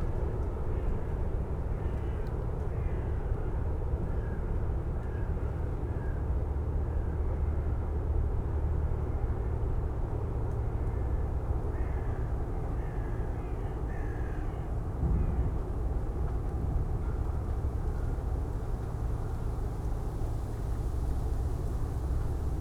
coal freighter shunting and freeing the water way from ice, in front of heating plant Klingenberg, ambience, crows, distant sounds from the power station
(Sony PCM D50, DPA4060)